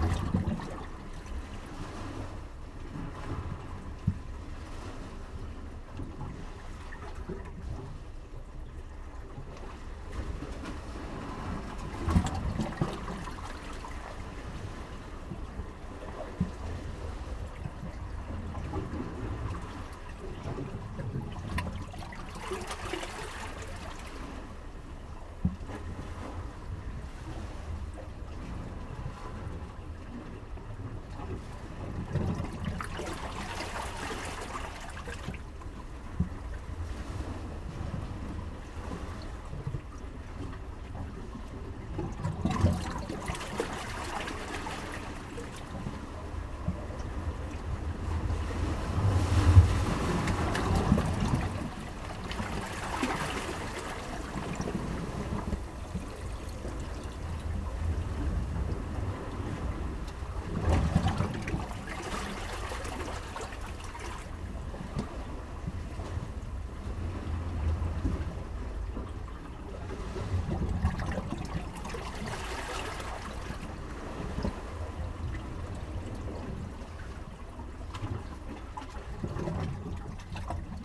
East Pier, Whitby, UK - Breakwater cistern ...
Breakwater cistern ... East Pier Whitby ... open lavalier mics clipped to sandwich box ... small pool between boulders filling up and emptying with a different rhythm to the tide ...
29 August 2017